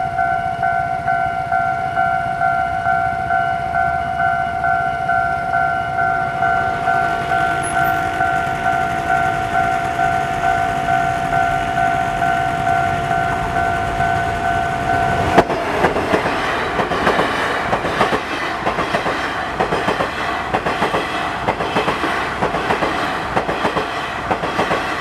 Fengshan, kaohsiung - Level crossing
Level crossing, Train traveling through, Sony Hi-MD MZ-RH1, Rode NT4